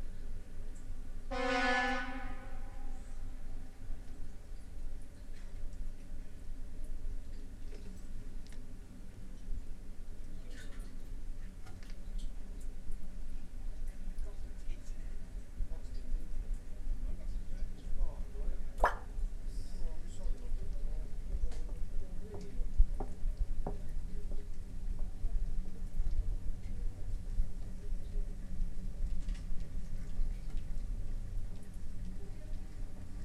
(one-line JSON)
{"title": "Tallinn, Baltijaam manhole covers - Tallinn, Baltijaam manhole covers (recorded w/ kessu karu)", "date": "2011-04-19 15:28:00", "description": "hidden sounds, miniature omnidirectional microphones pushed through small holes in two manhole covers by an exits to the quais at Tallinns main train station", "latitude": "59.44", "longitude": "24.74", "altitude": "20", "timezone": "Europe/Tallinn"}